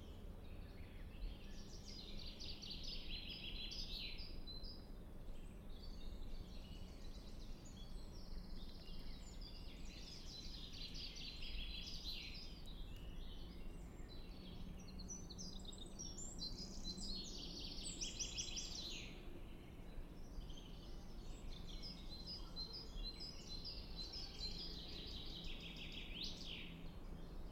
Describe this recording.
Soundfield microphone stereo decode. Birds, Tractor.